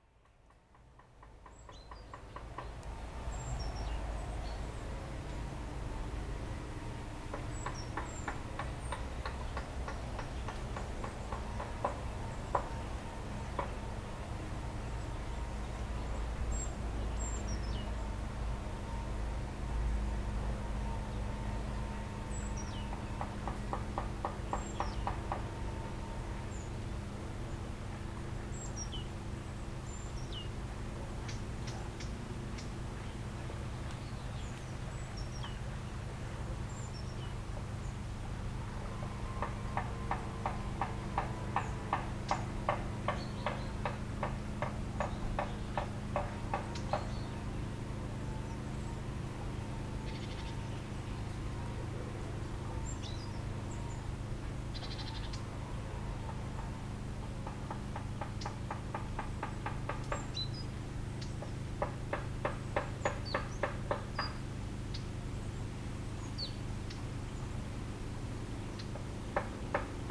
Promenade au fil de l'eau, Chem. des Confins, Aix-les-Bains, France - Ambiance du sentier
Au bord du sentier de la promenade au fil de l'eau. le murmure des feuillages, les bruissements des roseaux agités par la brise, quelques mésanges et merles, les bruits d'un chantier voisin, bateau sur le lac.....